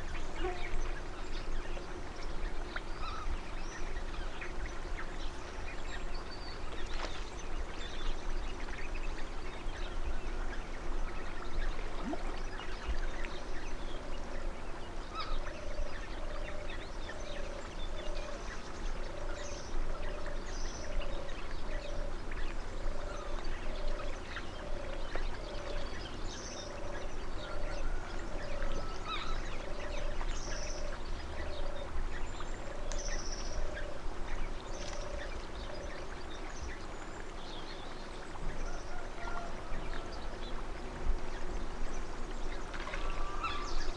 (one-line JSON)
{"title": "Nagozelo do Douro, Portugal - Manha ao lado do Douro, Nagozelo", "date": "2010-08-08", "description": "Manha em Nagozelo do Douro. Mapa Sonoro do Rio Douro. Morning next to the Douro river in Nagozelo do Douro. Douro River Sound Map", "latitude": "41.20", "longitude": "-7.41", "altitude": "85", "timezone": "Europe/Lisbon"}